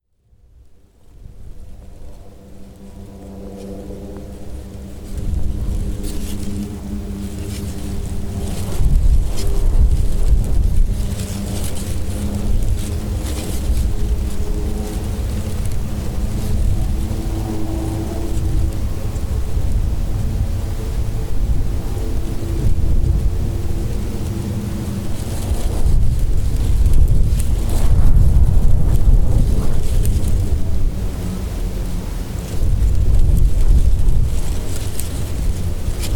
Liwa - Abu Dhabi - United Arab Emirates - Wind and dry vegatation - Liwa, Abu Dhabi
Recording of a very dry and windswept bush on the top of a sand dune in Liwa, Abu Dhabi, United Arab Emirates. I'm not sure if this is the precise location but it was close by.
2 September